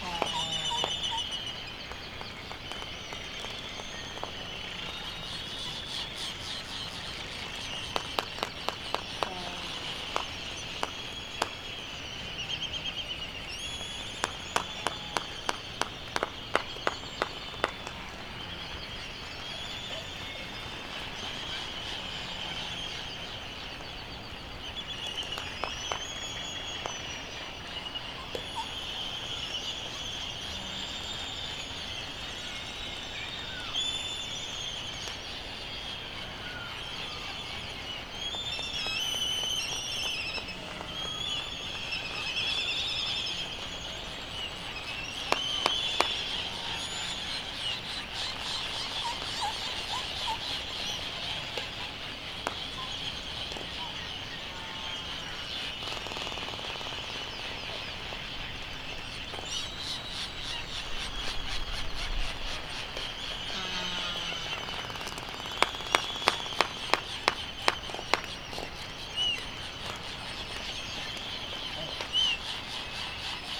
United States Minor Outlying Islands - Laysan albatross soundscape
Sand Island ... Midway Atoll ... open lavalier mics ... bird calls ... laysan albatross ... white terns ... black noddy ... bonin petrels ... background noise ...